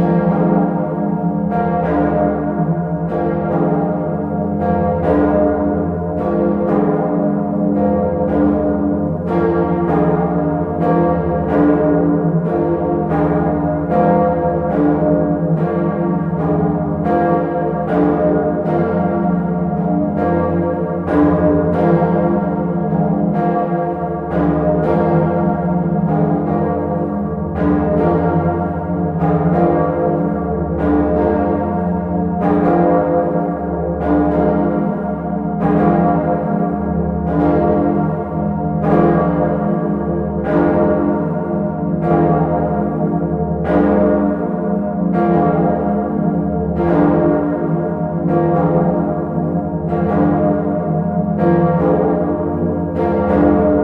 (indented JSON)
{
  "title": "Sens, France - Savinienne et Potentienne",
  "date": "2010-12-24 23:55:00",
  "description": "The two very big bells of the Sens cathedral.\n0:46 mn : the first stroke. The first bell, The Savinienne.\n2:33 : the second bell, the Potentienne.\nRecorded into the tower by -17°C ! It was extremely loud (135 dB, but it was said to us). Doves were flattened on the ground ! The first time they rang after 35 years of silence, an old person was crying, thinking the pope was dead.\nThese two bells are the few ones on the top of bells. Optimally to listen very loud, as it was inside.\nRecord made with Nicolas Duseigne on the christmas mass.",
  "latitude": "48.20",
  "longitude": "3.28",
  "altitude": "76",
  "timezone": "Europe/Paris"
}